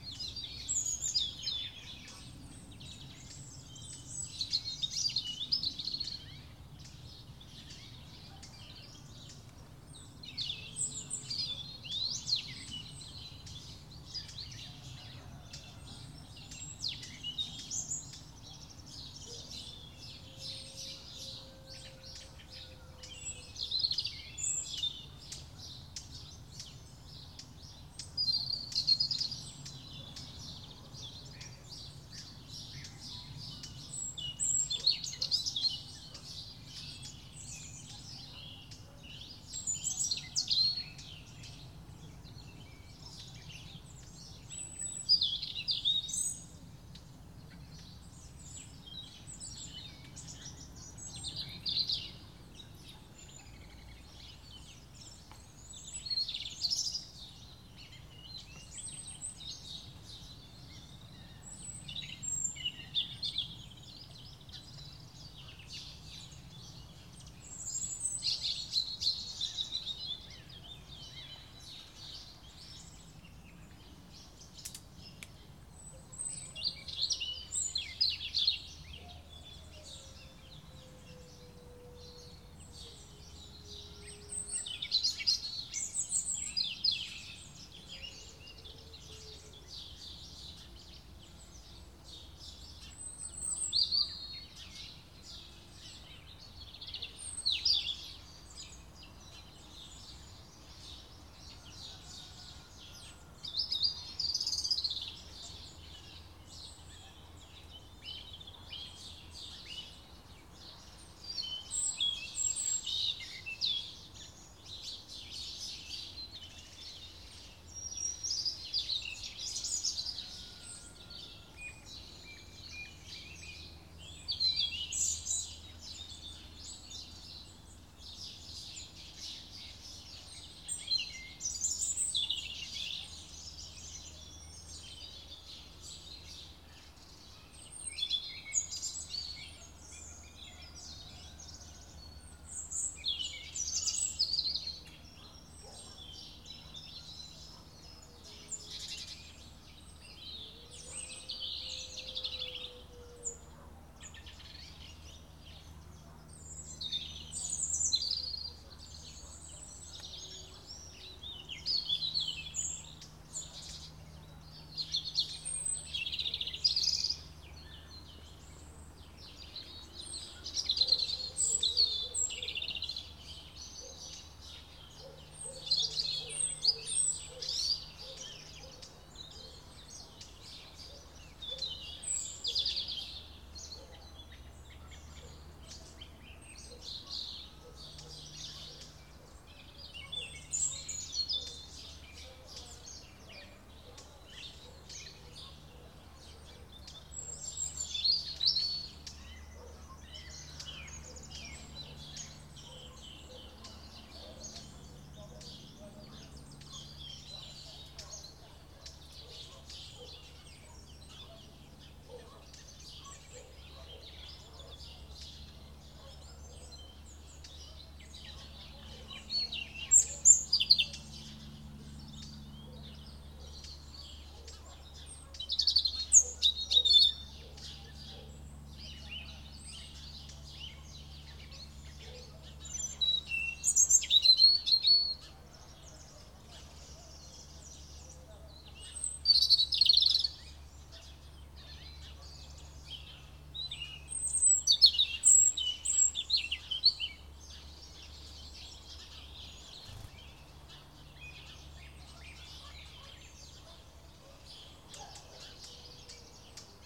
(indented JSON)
{
  "title": "R. Joaquim Belchior, Maceira, Portugal - Early morning on a cold day.",
  "date": "2022-01-12 09:00:00",
  "description": "Tech: Tascam DR-40X + Clippy XLR EM272, Matched Stereo Pair",
  "latitude": "39.17",
  "longitude": "-9.34",
  "altitude": "13",
  "timezone": "Europe/Lisbon"
}